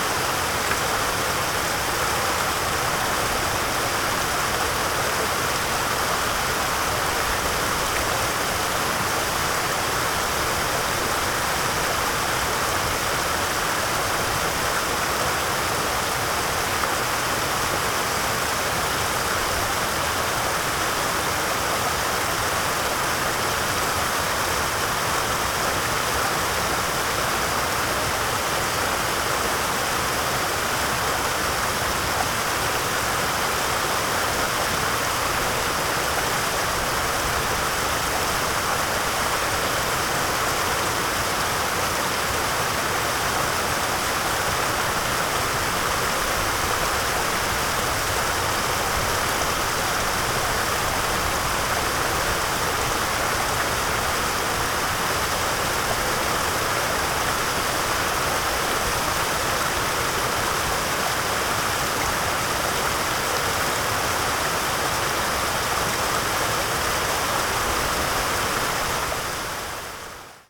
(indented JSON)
{
  "title": "Near Lac de Gréziolles, Campan, France - Running water (snow weather)",
  "date": "2017-12-31 15:49:00",
  "description": "Running water, distant hikers\nCours d’eau, randonneurs lointains",
  "latitude": "42.91",
  "longitude": "0.21",
  "altitude": "1590",
  "timezone": "GMT+1"
}